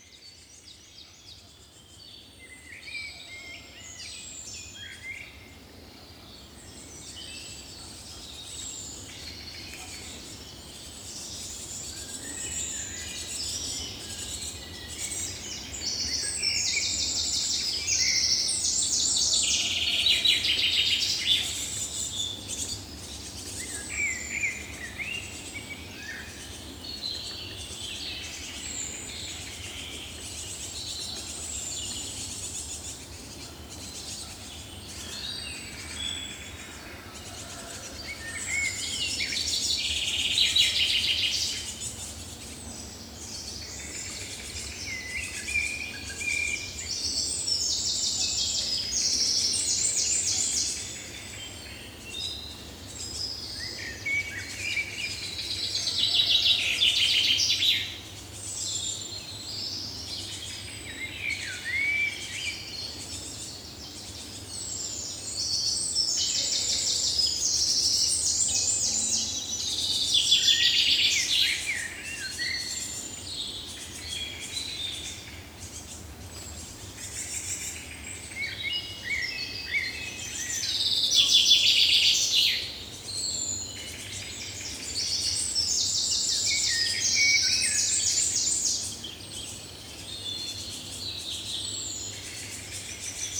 Thuin, Belgique - Birds in the forest
Belgian woods are not forests. It's an accumulation of trees. Inside run deep paths. You will find here shouting cyclists and noisy walkers. Above, it's an uninterrupted flight of take-off and landings. Downstairs is a tourist site: the Aulne abbey. An old vehicles parade makes a devil noise on the cobblestones. On the right is the village of Landelies. Sunday morning is a fine day today. A motorcycle concentration occupies the roads. At the top is Montigny-Le-Tilleul. Strident ambulances tear apart the soundscape. Belgium is that. It's nothing more than a gigantic pile of noise pollution, whatever the time whatever the day.
A moment, you have to mourn. The forest in Belgium no longer exists. These recordings made in the woods concentrate three hours of intense fighting, trying to convince oneself that something is still possible. Something is still possible ?
Common Chaffinch, lot of juvenile Great Tit, Blackbird.
Thuin, Belgium, 3 June 2018, ~08:00